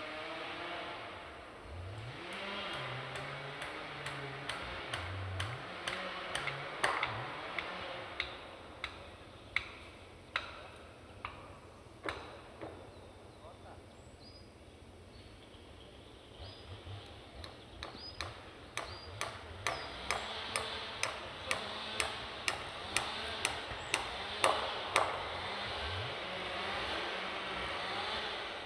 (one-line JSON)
{"title": "Narewka, Poland - Białowieza Forest is killed (binaural)", "date": "2016-05-03 11:10:00", "description": "In the national holiday (Constitution Day on May 3), with the consent of the Polish government is destroyed national treasure of nature, beautiful and magical place, Bialowieza Forest.", "latitude": "52.81", "longitude": "23.78", "altitude": "165", "timezone": "Europe/Warsaw"}